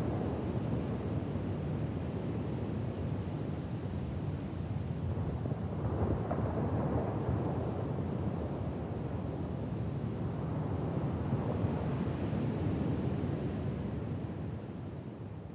On the sand, St Ninian's Isle, Shetland, UK - The wind blowing over a beer bottle buried in the sand
After a lovely walk around the headland trying to photograph and record sheep, myself, Kait and Lisa had a picnic. One feature of this picnic was some tasty Shetland ale, and carrying the empty glass beer bottle back across the island, I was delighted by the sound of the wind playing over the top of it, and the flute-like tones that emanated. When we got down to the beach, I searched for a spot in the sand where the bottle might catch the wind in a similar way, and - once I found such a spot - buried it there. I popped my EDIROL R-09 with furry Rycote cover down in the sand beside it, and left everything there to sing while I went to record the sand and the water by the shoreline. When I listened back to the recording, I discovered that a small fly had taken an interest in the set up, and so what you can hear in this recording are the waves bearing down on the beach, the wind blowing across the emptied beer bottle, and the tiny insect buzzing around near the microphones.